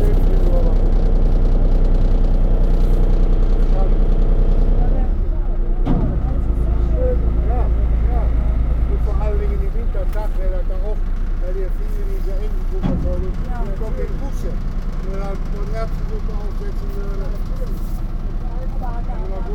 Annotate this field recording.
On the small ferry boat that transports passengers from Düffelward through a small Rhine arm to the Rhine island with the village Schenkenschanz. The sound of the boat motor and the ferry shipman talking to his passengers. At the end the sound of the metal ramp touching the concrete landing area. soundmap d - social ambiences and topographic field recordings